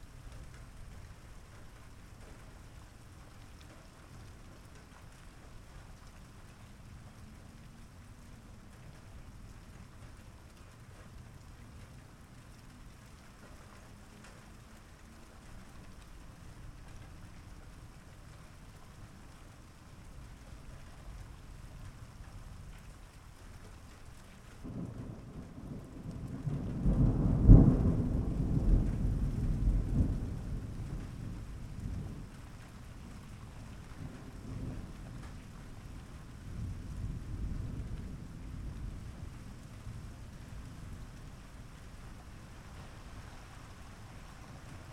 Chem. la Tessonnière den Bas, La Motte-Servolex, France - ORAGE LA TESSONNIERE STORM THUNDER
Thunder and rain on late afternoon in la Tessonnière d'en bas, in la Motte Servolex. The town where I grew up.
Auvergne-Rhône-Alpes, France métropolitaine, France, 12 August 2021, 18:04